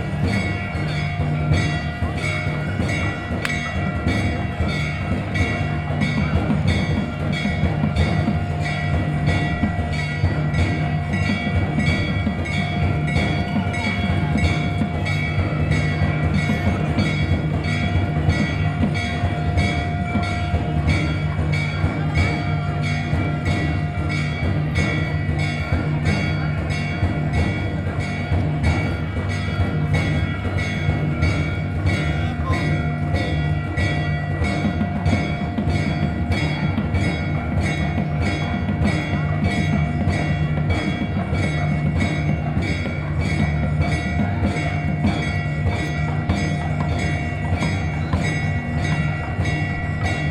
Hubli, Sri Gurunathrudha Swami Math, Bells & Co
India, Karnataka, Hubli, Sri Gurunathrudha Swami Math, Temple, Maha Shivaratri, Bells
25 February 2011, ~20:00